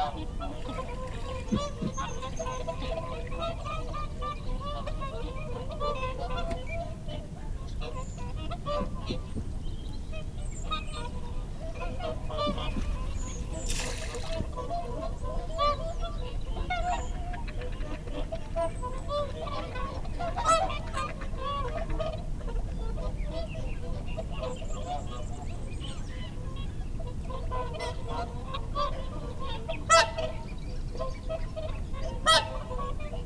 Dumfries, UK - whooper swan soundscape ...
whooper swan soundscape ... dpa 4060s clipped to a bag to zoom f6 ... folly pond ... bird calls from ... teal ... shoveler ... mallard ... oystercatcher ... mute swan ... barnacle geese ... wigeon ... lapwing ... redwing ... dunlin ... curlew ... jackdaw ... wren ... dunnock ... lapwing ... some background noise ... love the occasional whistle from wings as birds fly in ... possibly teal ... bits of reverb from the whoopers call are fascinating ... time edited unattended extended recording ...
Alba / Scotland, United Kingdom, 4 February 2022